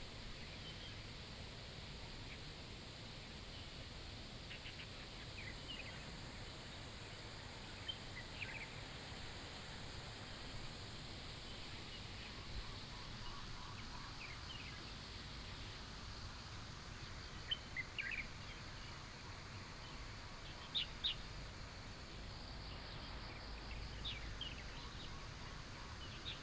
{"title": "桃米巷, 南投縣埔里鎮桃米里 - In the morning", "date": "2015-10-07 06:08:00", "description": "In the morning, birds sound", "latitude": "23.94", "longitude": "120.94", "altitude": "453", "timezone": "Asia/Taipei"}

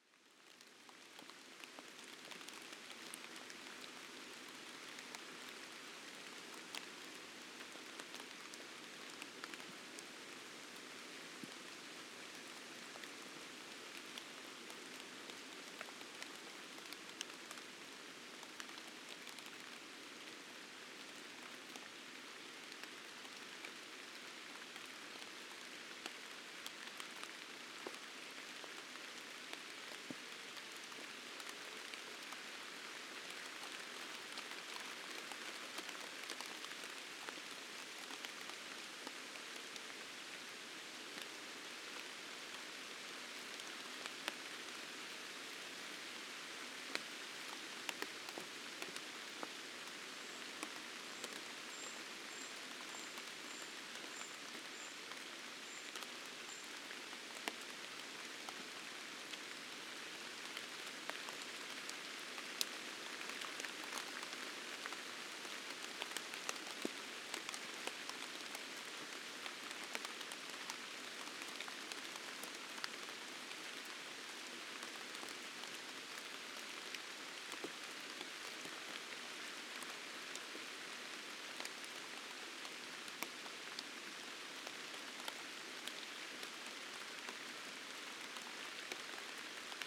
{"title": "Inkartai, Lithuania, rain in cemetery", "date": "2022-09-19 16:10:00", "description": "Small Inkartai willage cemetery. Rain comes", "latitude": "55.46", "longitude": "25.77", "altitude": "194", "timezone": "Europe/Vilnius"}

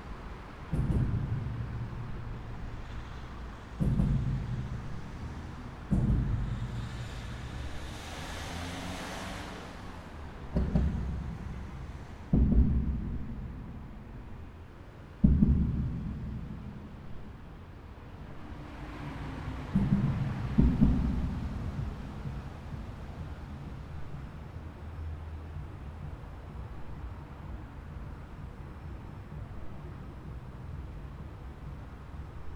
{"title": "Escher Wyss, Zürich, Sound and the City - Sound and the City #28", "date": "2012-07-18 21:45:00", "description": "Ein Hörort draussen im nirgendwo, am Rand (oder ausserhalb) des Quartiers, mitten in unwirtlichen Verkehrsströmen. Verkehrsgeräusche von verschiedenen Verkehrsebenen: Autos, die vor einer Lichtsignalanlage warten, teilweise mit Musik. Unheimlich wirken die resonierenden Fahrbahngeräusche, die durch die Nuten der Autobahnbrücke entstehen.\nArt and the City: Michael Meier & Christoph Franz (Tankstelle, 2012)", "latitude": "47.39", "longitude": "8.49", "altitude": "401", "timezone": "Europe/Zurich"}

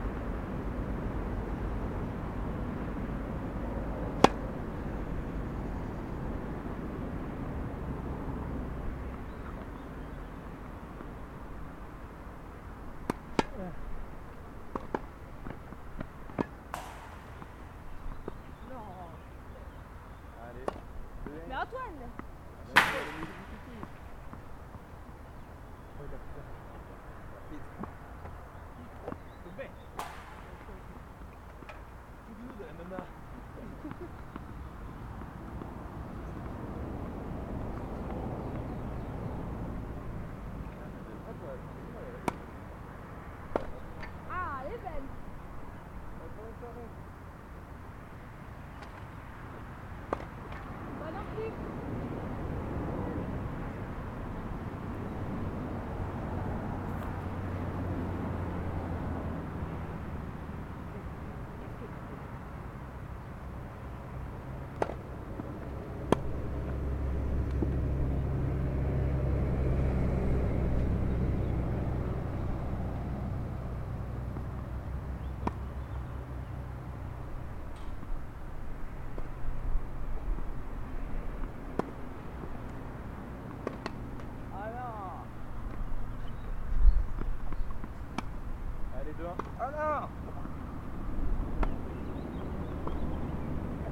{"title": "Courts de tennis, baie de Grésine 73100 Brison-Saint-Innocent, France - Echange de balles.", "date": "2022-06-28 17:15:00", "description": "Quatre joueurs sur les courts de tennis près de la courbe de la RD991 assez fréquentée, impulsions sonores des frappes de balles. Enregistreur ZoomH4npro posé à plat au sol.", "latitude": "45.74", "longitude": "5.89", "altitude": "235", "timezone": "Europe/Paris"}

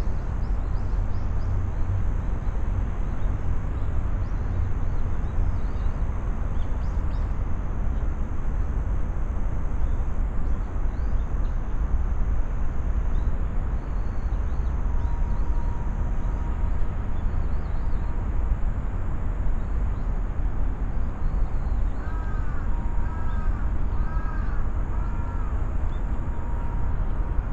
{"title": "hama-rikyu gardens, tokyo - gardens sonority", "date": "2013-11-14 13:58:00", "latitude": "35.66", "longitude": "139.76", "altitude": "9", "timezone": "Asia/Tokyo"}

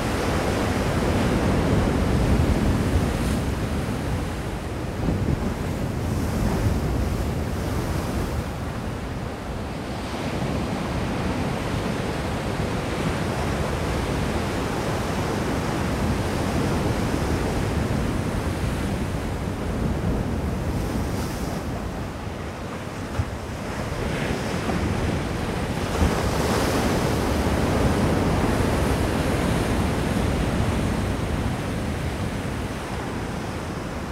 {"title": "anse des cascades, piton saint rose", "date": "2010-08-14 17:57:00", "description": "souffleur, vagues fortes", "latitude": "-21.19", "longitude": "55.83", "altitude": "8", "timezone": "Indian/Reunion"}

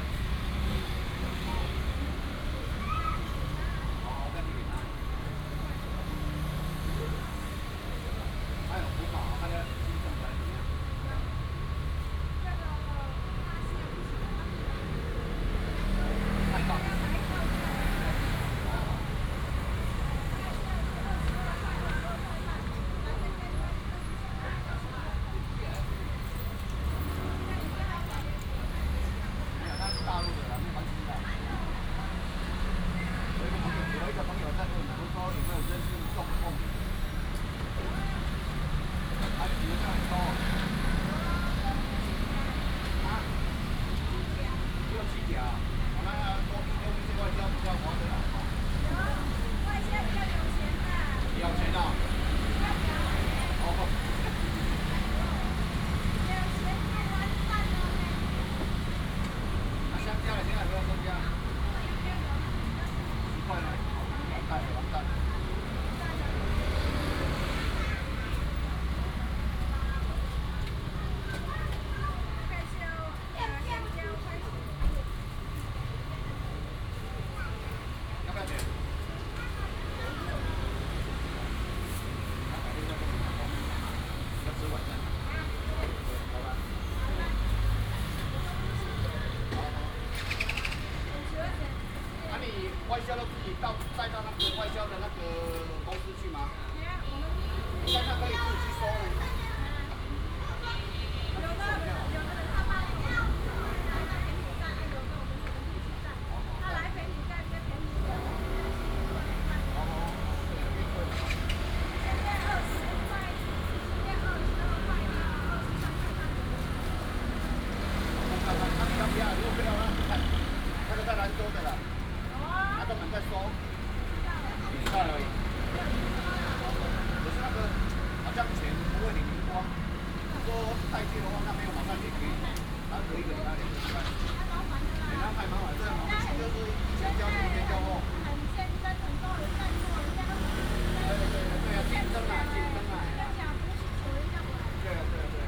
{"title": "中林路79號, Linbian Township, Pingtung County - Traditional Market District", "date": "2018-04-14 11:01:00", "description": "Traditional Market District, Street vendor selling pineapple, traffic sound\nBinaural recordings, Sony PCM D100+ Soundman OKM II", "latitude": "22.43", "longitude": "120.52", "altitude": "6", "timezone": "Asia/Taipei"}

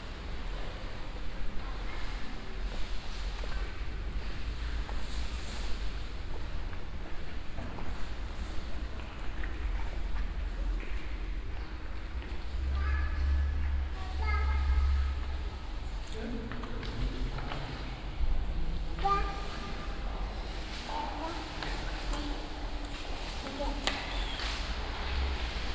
{"title": "vaison la romaine, roman church", "date": "2011-08-26 16:59:00", "description": "Inside the silent atmosphere of the historical church Notre-Dame de Nazareth de Vaison-la-Romaine.\ninternational village scapes - topographic field recordings and social ambiences", "latitude": "44.24", "longitude": "5.07", "altitude": "198", "timezone": "Europe/Paris"}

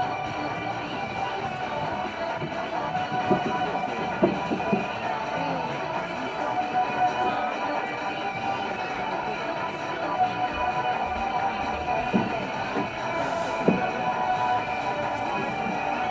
{"title": "Unnamed Road, Tamshiyacu, Peru - river side market noise Tamshiyacu", "date": "2001-02-10 17:27:00", "description": "river side market noise Tamshiyacu", "latitude": "-4.02", "longitude": "-73.15", "altitude": "93", "timezone": "America/Lima"}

